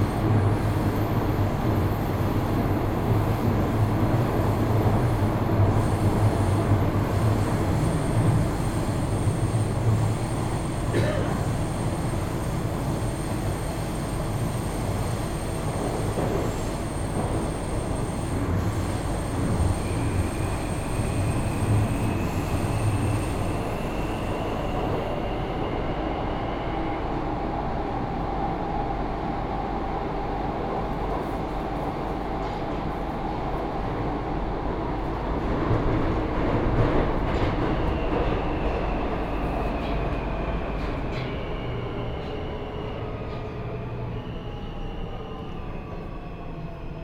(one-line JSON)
{"title": "Metro Służew, Warsaw, Poland - (97) Metro ride from Służew to Wilanowska station", "date": "2017-02-15 14:00:00", "description": "Binaural recording of short metro ride in Warsaw.\nRecorded with Soundman OKM + Sony D100\nSound posted by Katarzyna Trzeciak", "latitude": "52.17", "longitude": "21.03", "altitude": "103", "timezone": "Europe/Warsaw"}